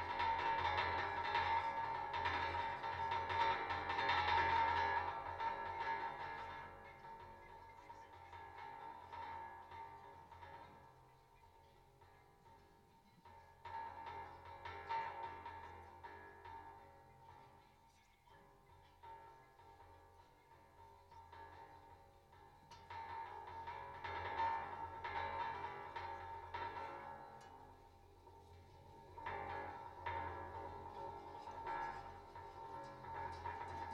Chorillo Miraflores Valley, wind 35km/h, (ZOOM F1, stereo contact mice on fence)
The Miraflores Valley was one of the most important lithic sources of raw materials (tuff and silicified tuff) for the production of stone tools at the Isla Grande de Tierra del Fuego region. Recent archaeological research showed that the materials were transported up to 320 km away and also off shore. These rocks were recorded in archeological contexts of several small islands in the Strait ofMagellan and on the coasts of continental Patagonia, suggesting some level of interaction between terrestrial and maritime
hunter-gatherers dating back 4000-3000 years.
Región de Magallanes y de la Antártica Chilena, Chile